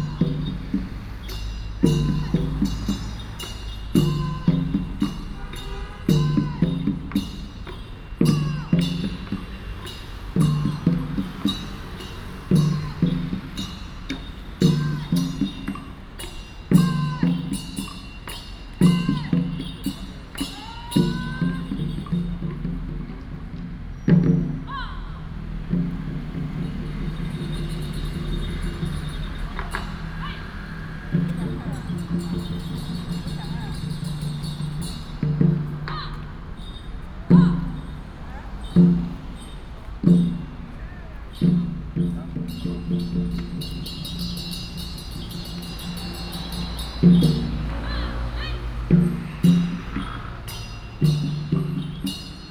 In the square, Theater performance, Traffic sound
Nantou County, Taiwan, 18 September 2016, ~16:00